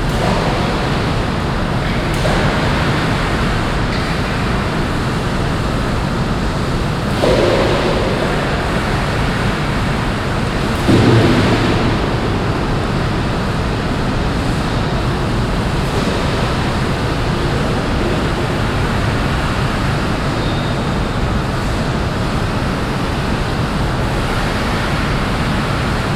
Pempelfort, Düsseldorf, Deutschland - Düsseldorf, Münstertherme, swim hall
Inside the swim hall. The sound of workers cleaning the place.
This recording is part of the intermedia sound art exhibition project - sonic states
soundmap nrw - topographic field recordings, social ambiences and art places
Düsseldorf, Germany, 14 January, 08:45